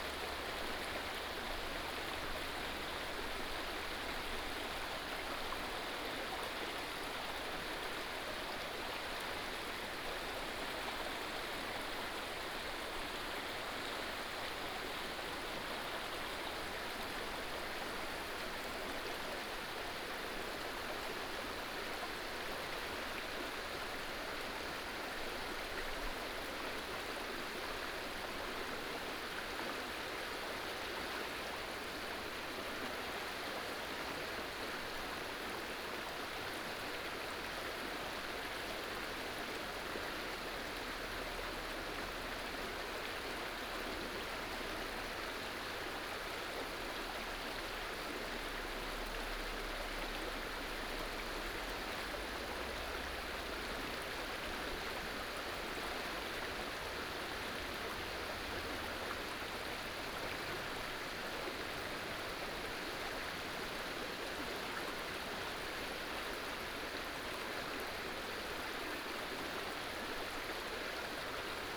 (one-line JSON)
{"title": "南河, Baguali, Nanzhuang Township - On the river bank", "date": "2017-09-15 09:28:00", "description": "stream, On the river bank, Binaural recordings, Sony PCM D100+ Soundman OKM II", "latitude": "24.57", "longitude": "120.98", "altitude": "278", "timezone": "Asia/Taipei"}